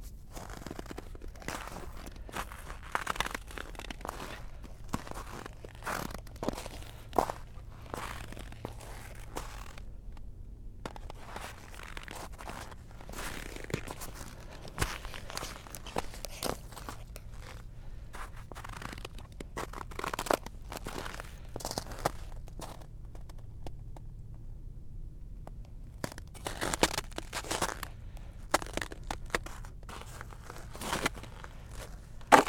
sounds across the frozen river, Parnu
throwing ice and hearing manufacturing sounds across the river
Pärnu, Pärnu County, Estonia, 31 January 2012